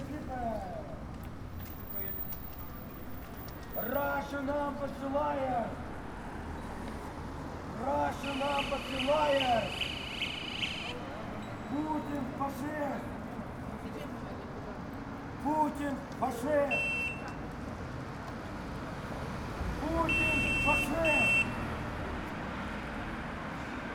Embassy of the Russian Federation - "Stop Putin, Stop the War!" 4